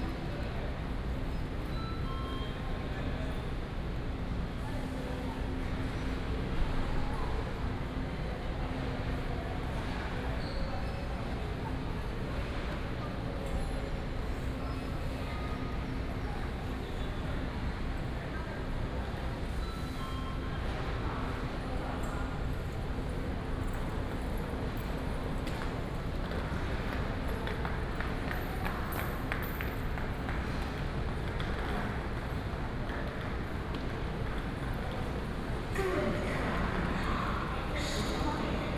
From the station hall, Through the underground road, To the station platform
West District, 嘉義火車站第二月台